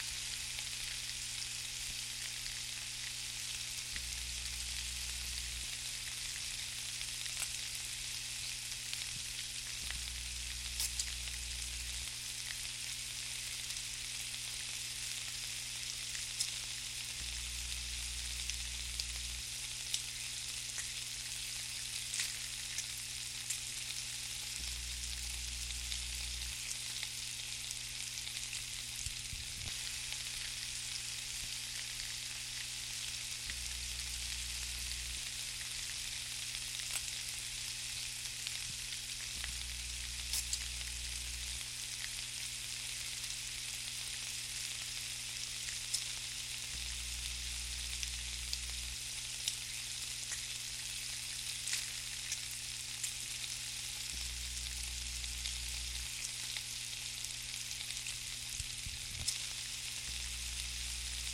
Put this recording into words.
If this doesn't make you hungry I don't know what will.